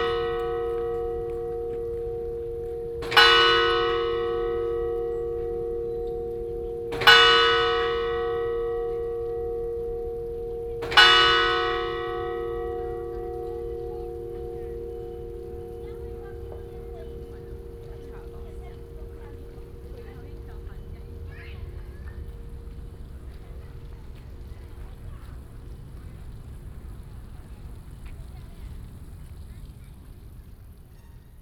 傅鐘, National Taiwan University - Bell
At the university, Bell
Zhoushan Rd, 傅鐘